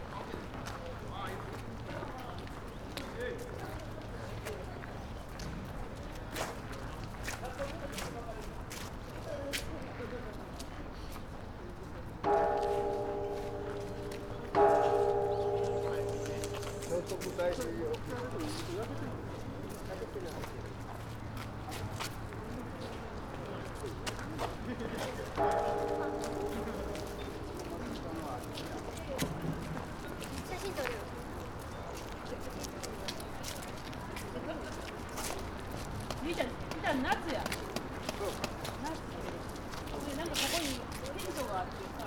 Osaka Tennōji district, entrance to Shitennoji Temple - entrance to Shitennoji Temple
visitors passing, steps, conversations, bell in the distance